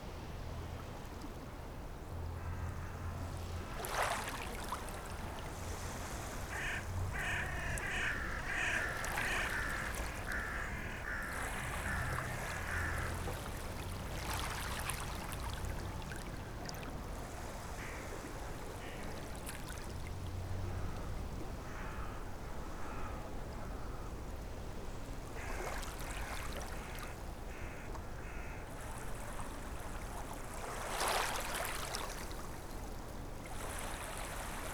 Latvia, Jurmala, autumnal beach
just 7 minuts on the Jurmala beach in september
12 September 2010, ~16:00